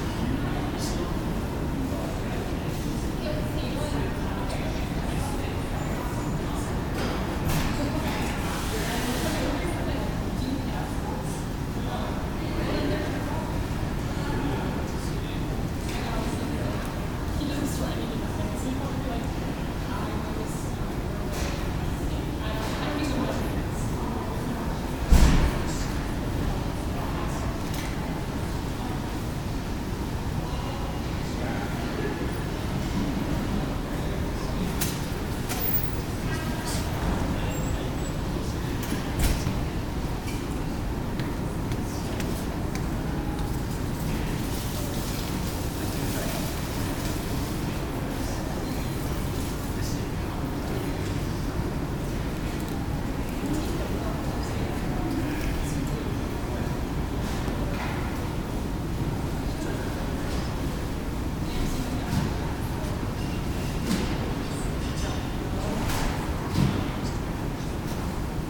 St NW, Edmonton, AB, Canada - The Hub-Bub of HUB-Mall
This is a sonic photograph taken from HUB Mall at the University of Alberta. It's nothing special. It's simply students passing by and chatting with friends, maybe inhaling some coffee before the next class. I wanted to give a sample of the everyday life here in Edmonton. The recording is done from an online D.A.W. and might be poor, but I'm saving up to get a good audio recorder. This is to be the first of several samples that I'll will upload until I see fit.
Thank you, Professor, for introducing me to this wonderful site.